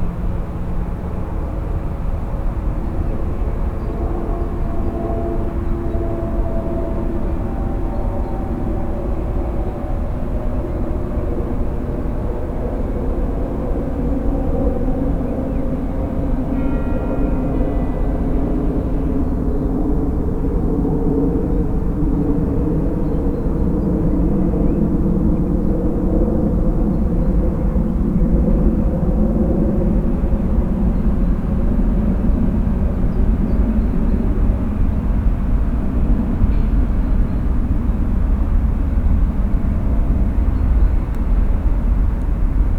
Up on the hill, noisy of all the traffic coming from the city below, planes also.
PCM-M10, SP-TFB-2, binaural.